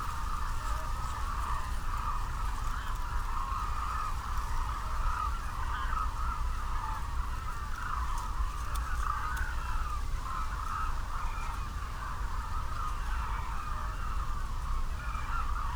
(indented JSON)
{
  "title": "Black hooded cranes from 200m 흑두루미 - Black hooded cranes from 200m 훅두루미",
  "date": "2020-01-25 12:30:00",
  "description": "migratory birds gather and socialize in post harvest rice fields...distant sounds of surrounding human activity...",
  "latitude": "34.88",
  "longitude": "127.51",
  "altitude": "4",
  "timezone": "Asia/Seoul"
}